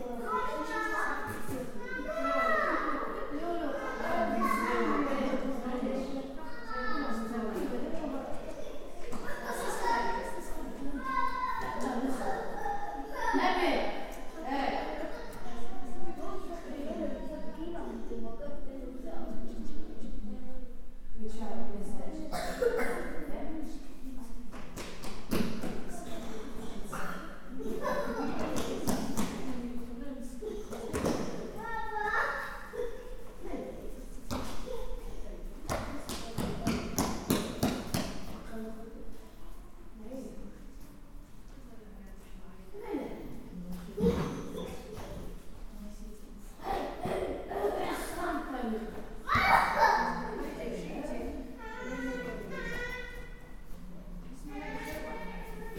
Altenessen - Süd, Essen, Deutschland - u-bhf. kaiser-wilhelm-park
u-bhf. kaiser-wilhelm-park
Essen, Germany, 31 August 2015